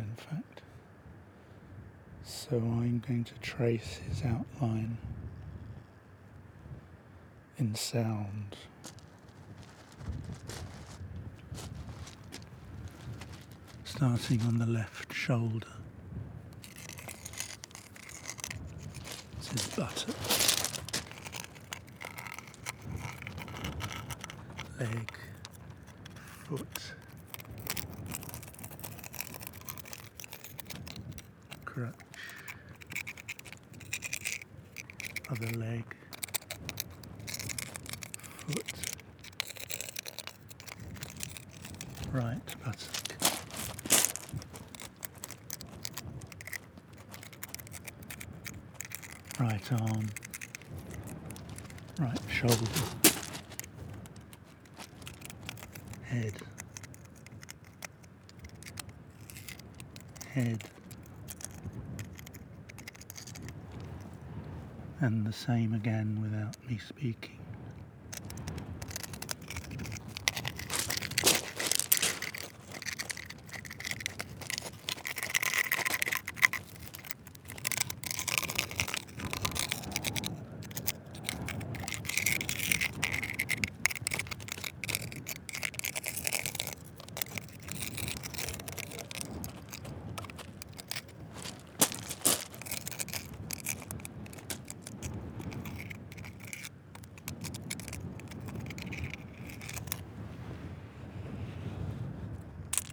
The concrete man, Romney Marsh, UK - The concrete man
The concrete man arrived about 20 years ago. Who made him and left him here is unknown and remains a mystery. He lies facedown in the desolate grey shingle, patches of organge lichen on his back and dark moss growing down his spine and under his arm.
England, United Kingdom